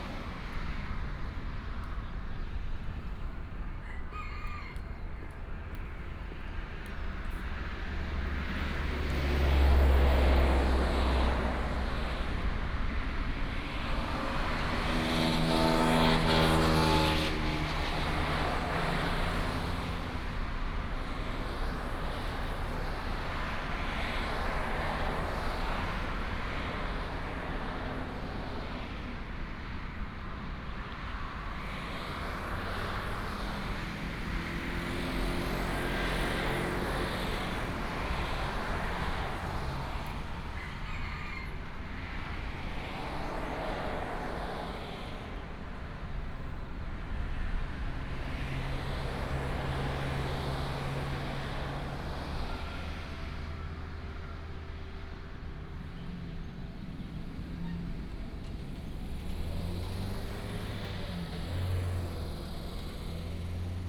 全家便利商店台東大竹店, Dawu Township - Parking in the convenience store
Parking in the convenience store, Chicken crowing, Traffic sound
Taitung County, Taiwan, 2 April 2018